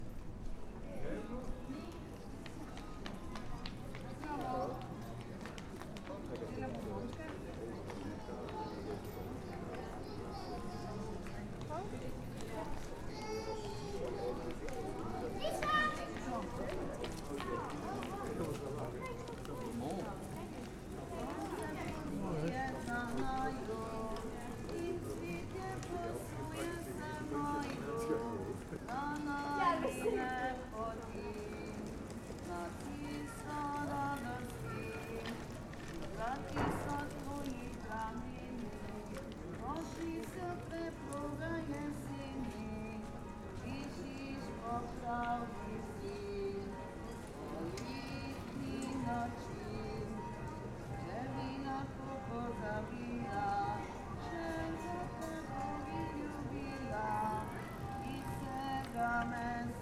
Gosposka ulica, Jurčičeva ulica, Maribor, Slovenia - corners for one minute
one minute for this corner - gosposka ulica and jurčičeva ulica